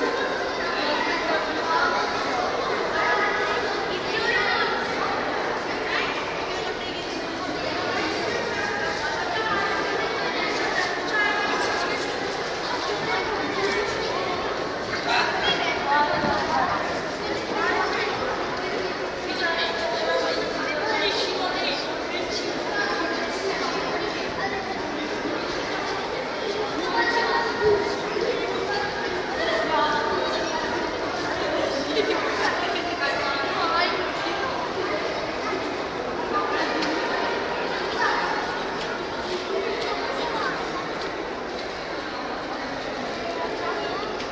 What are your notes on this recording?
inside the mosque, may 2003. - project: "hasenbrot - a private sound diary"